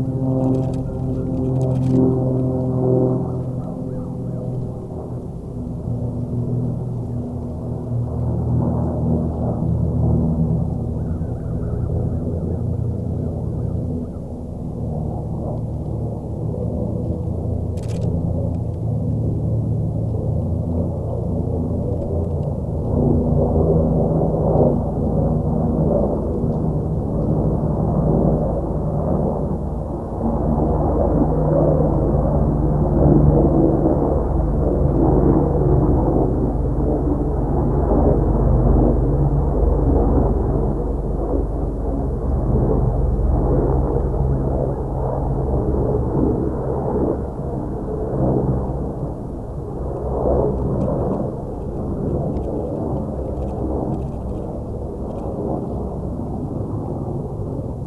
Warren Landing Rd, Garrison, NY, USA - Tidal Wetland, Hudson River Estuary
Soundscape recorded at the Constitution Marsh Audubon Center and Sanctuary trail located on the east side of the Hudson River.
This tidal marsh is a vital natural habitat for many species of wildlife and is a significant coastal fish habitat and a New York State bird conservation area.